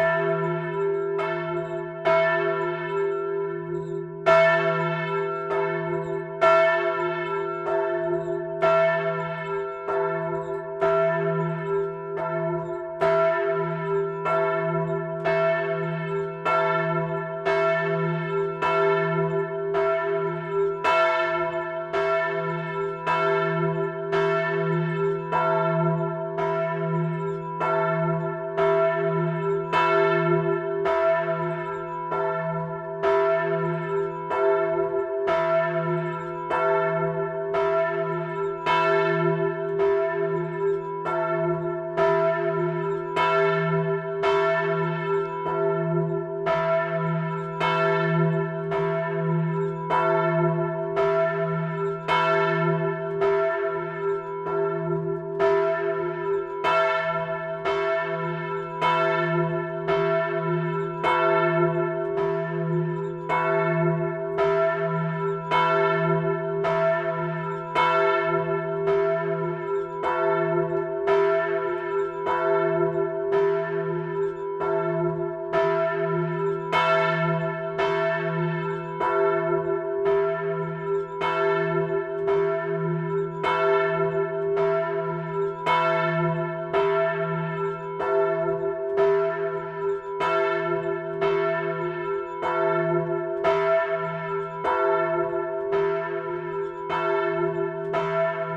Rue Jean Jaurès, Haspres, France - Haspres - Département du Nord église St Hugues et St Achere volée - cloche grave.
Haspres - Département du Nord
église St Hugues et St Achere
volée cloche grave.